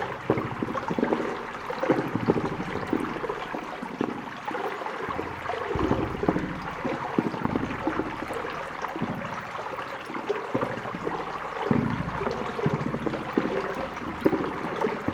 Volmerange-les-Mines, France - Bubbles in a pipe
Into the underground mine, water is entering into a pipe and makes some strange sounds. It's because of turbulence and some small bubbles.